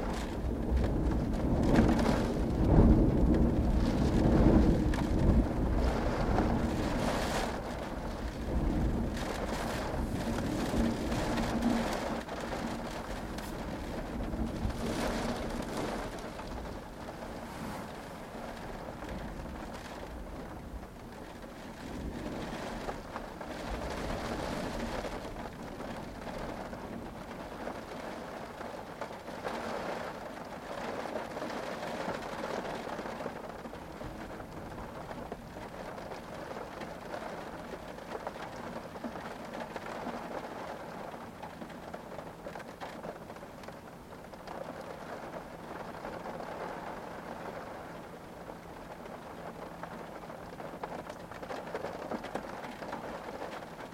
Olafsvik - Wind and Storm in the car
Wind, rain and storm outside the car.
Ólafsvík, Iceland, 9 October 2015